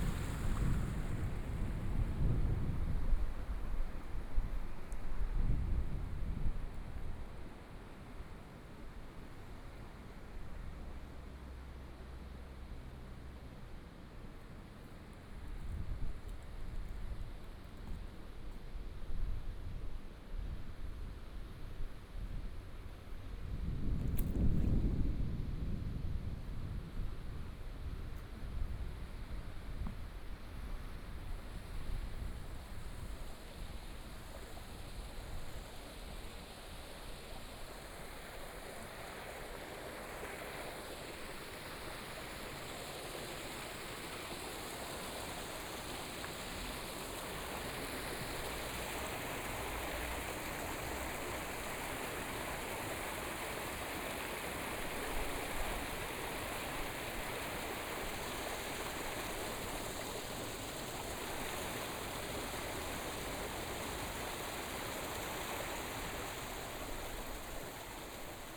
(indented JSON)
{
  "title": "Guanxi Township, Taiwan - the sound of water",
  "date": "2013-12-22 13:38:00",
  "description": "Walking along the river side, Walking from the downstream to the upstream direction, The sound of water, Binaural recording, Zoom H6+ Soundman OKM II",
  "latitude": "24.79",
  "longitude": "121.18",
  "altitude": "131",
  "timezone": "Asia/Taipei"
}